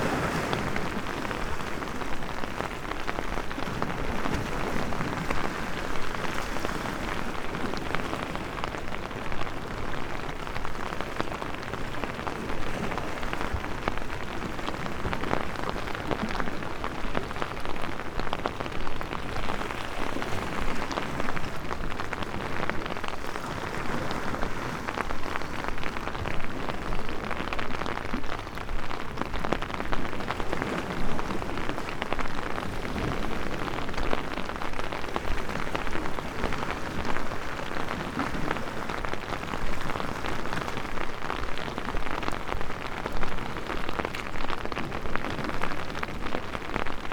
pier, Novigrad - rain, morning walk
walk with umbrella at the edge between see wall rocks and stony pier, rain
13 July 2014, 08:50